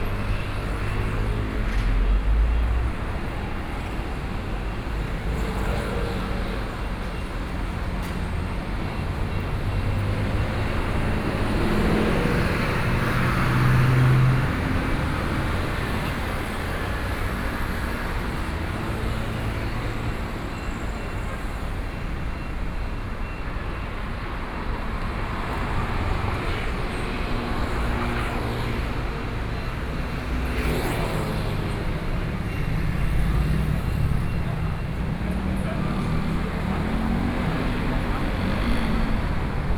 Liaoning St., Zhongshan Dist. - in the streets
Traffic Sound, Walking in the streets, Various shops sound
Taipei City, Taiwan, 2 May 2014, 12:06